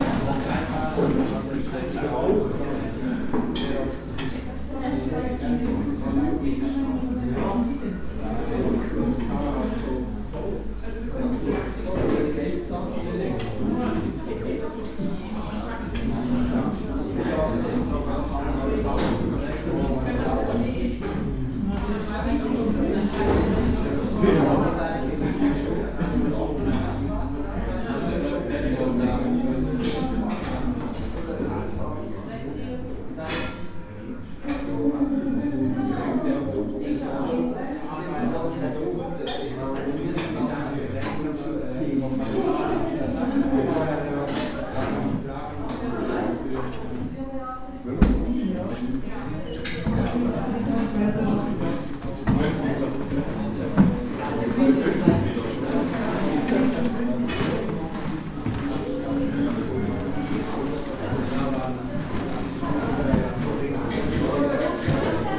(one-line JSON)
{"title": "Zürich West, Schweiz - Wurst & Moritz", "date": "2014-12-31 12:30:00", "description": "Wurst & Moritz, Hardstr. 318, 8005 Zürich", "latitude": "47.39", "longitude": "8.52", "altitude": "409", "timezone": "Europe/Zurich"}